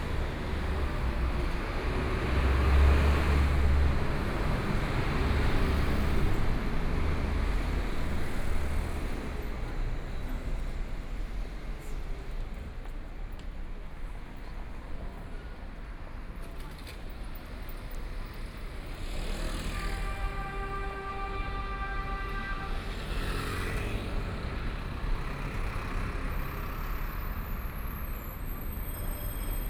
Jingling East Road, Shanghai - in the Street
Walking in the Many musical instrument company, Traffic Sound, Binaural recording, Zoom H6+ Soundman OKM II